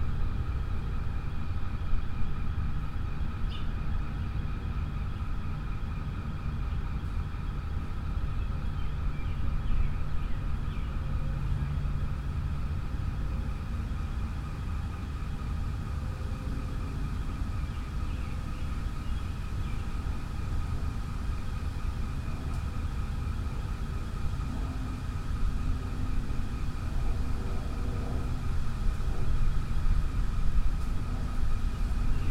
Kelton Street, Boston, MA, USA - Ringer Park Behind Lewis & Gordon Center
Recorded with Zoom H1, equalized in Audacity. An air conditioning fan drones along with birds, an airplane, and rustling trees.